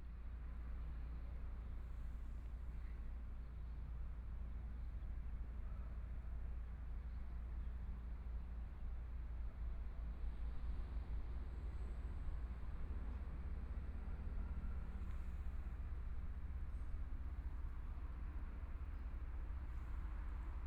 Zhiben, Taitung City - Environmental sounds
Traffic Sound, Town, Plaza in front of the temple, Environmental sounds, Binaural recordings, Zoom H4n+ Soundman OKM II ( SoundMap20140117- 3)
Taitung County, Taiwan, 17 January 2014, ~14:00